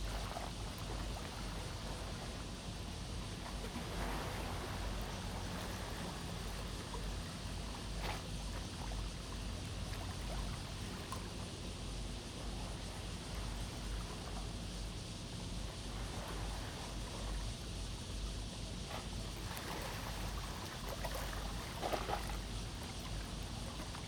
Tide, Fishing port
Zoom H2n MS+XY